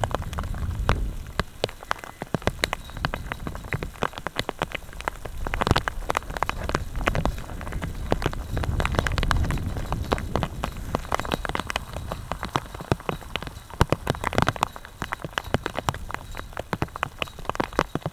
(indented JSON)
{
  "title": "Popcorn hail on Trawden Rec - Popcorn hail",
  "date": "2021-05-16 15:29:00",
  "latitude": "53.85",
  "longitude": "-2.13",
  "altitude": "216",
  "timezone": "Europe/London"
}